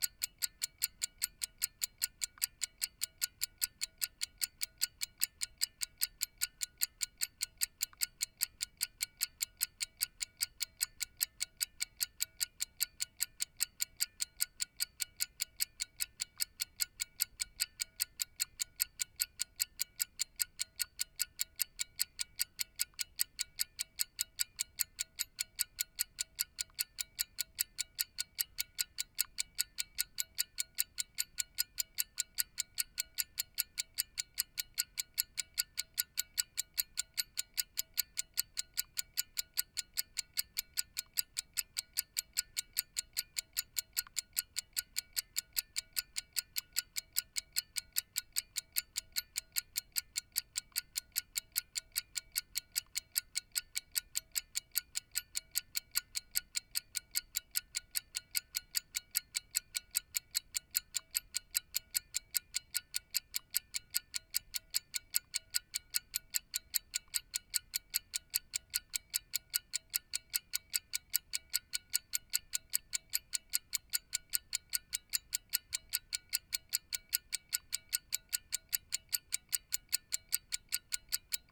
Unnamed Road, Malton, UK - pocket watch ticking number two ...
pocket watch ticking number two ... a waltham moon pocket watch made 1960s ... jrf contact mics attached to shell to olympus ls 14